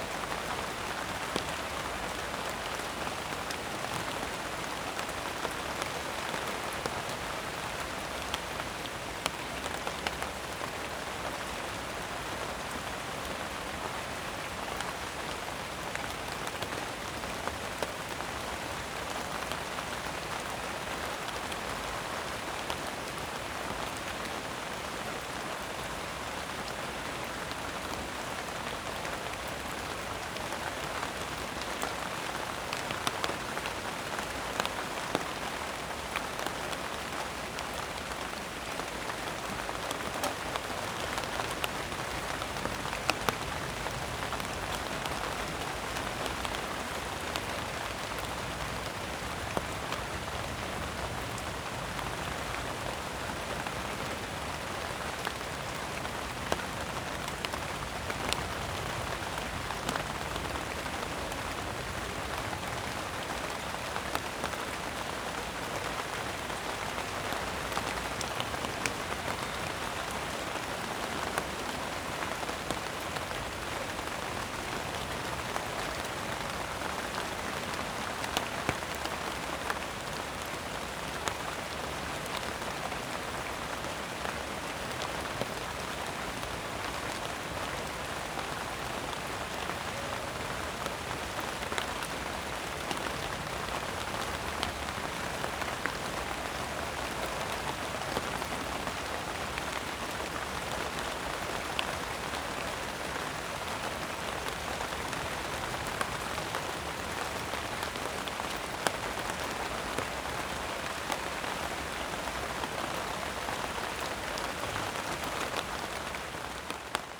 Hiddenseer Str., Berlin, Germany - Autumn rain falls onto knotweed leaves in the Hinterhof
Steady rainfall recorded with the microphones on the ground sheltered under Japanese knotweed leaves. Two year ago there was a cherry tree is this Hinterhof. It provided much tasty fruit. Sadly it began to lean over and was cut down; almost the only act of gardening that has ever happened here. Now Japanese knotweed has taken over. Such an invasive plant.